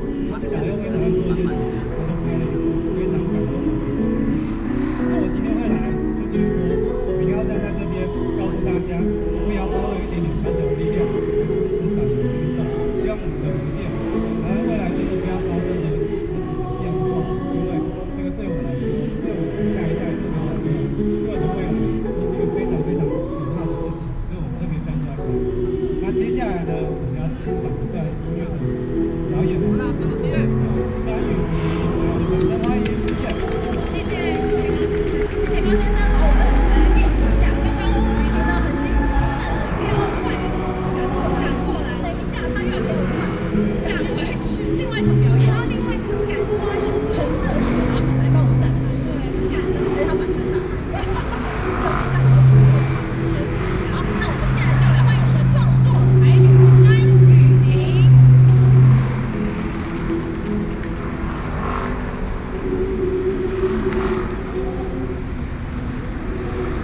Taipei - anti–nuclear
anti–nuclear power, in front of the Plaza, Broadcast sound and traffic noise, Sony PCM D50 + Soundman OKM II